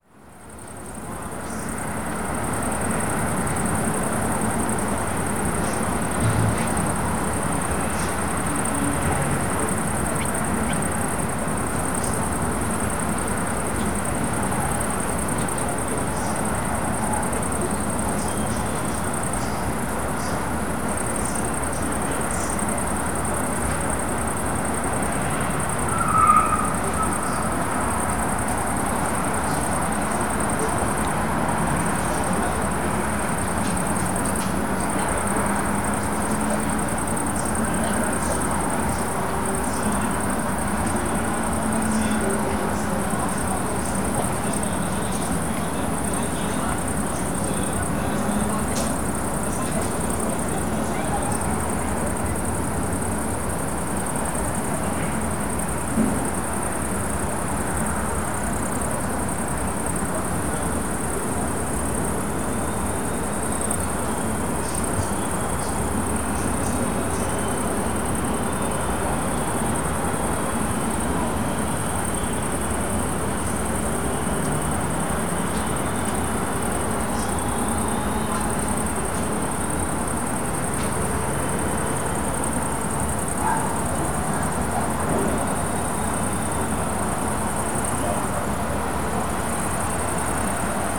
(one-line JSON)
{"title": "Poznan, balcony - cicadas during soccer game", "date": "2014-07-05 22:12:00", "description": "walking out to take a breather on a muggy evening. cicadas swarm the wild field, snatches of a soccer game commentary sneaking in from the apartment. sleepy night ambience over the Jana III Sobieskiego housing estate.", "latitude": "52.46", "longitude": "16.90", "timezone": "Europe/Warsaw"}